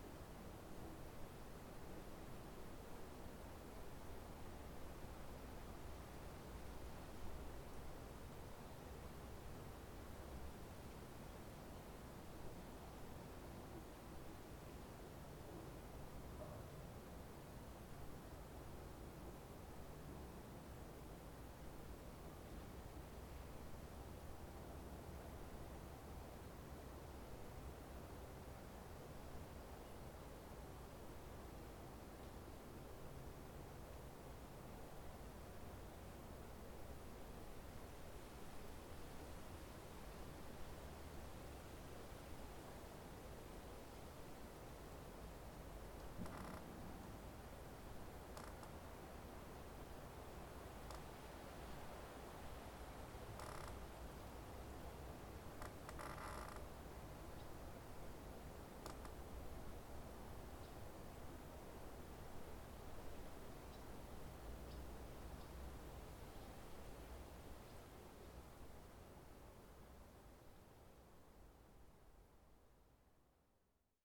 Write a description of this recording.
Screeching tree/Vajkard/International Workshop of Art and Design/Zoom h4n